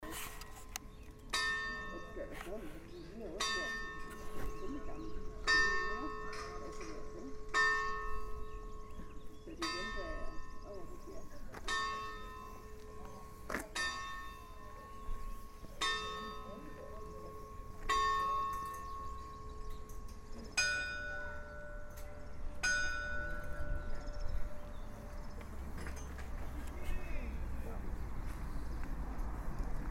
Monte Pellegrino Palermo (Romansound)
Campane del campanile di S. Rosalia H. 12,390(edirol R-09HR)
SIC, Italia